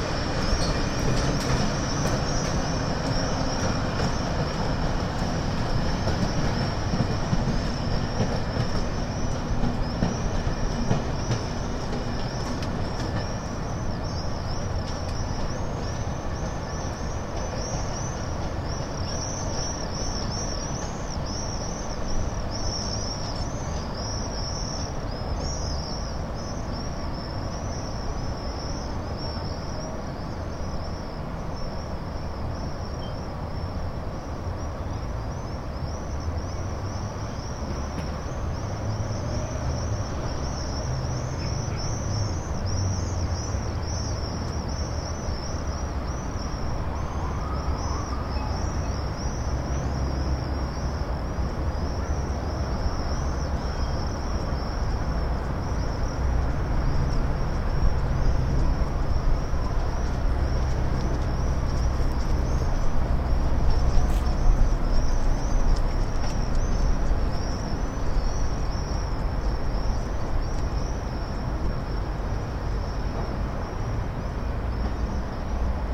Pedestrian bridge above the Smichov Railway Station. The composition of the locomotive and the flock of swifts, an important part of the Prague soundscape. The bridge connects Smíchov and Radlice district and in the middle is the stairs to the perron where few local trains are departure to Hostivice. In distance hums the highway and Mrázovka Tunel.